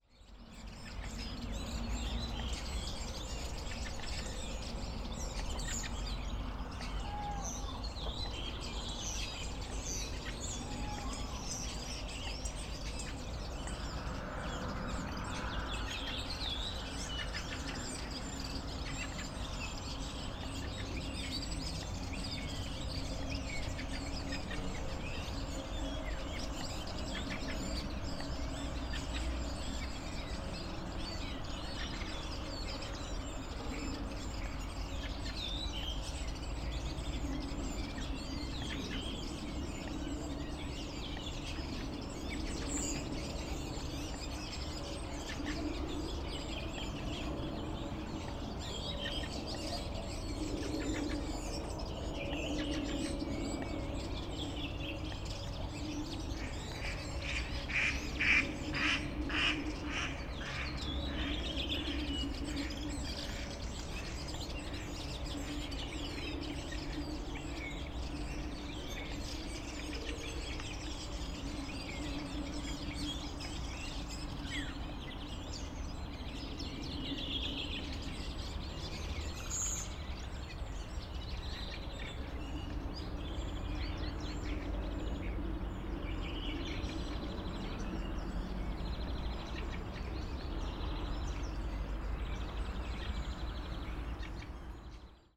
morning chorus near the lake with distant traffic sounds